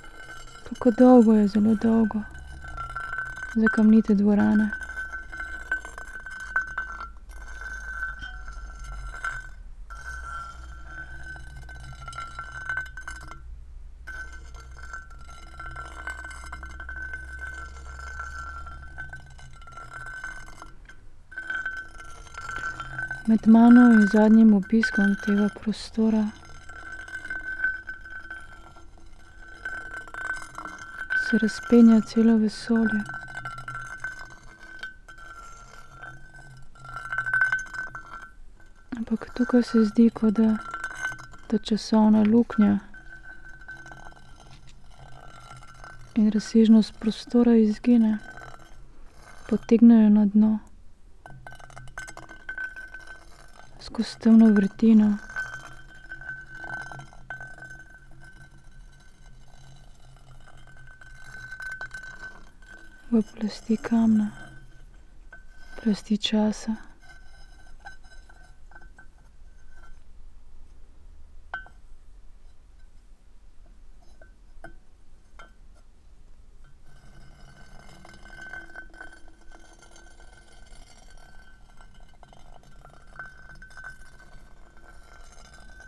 quarry, Marušići, Croatia - void voices - stony chambers of exploitation - sedimented time
the moment I wanted to stream to radio aporee, but connection was too fragile, spoken words, stone and iron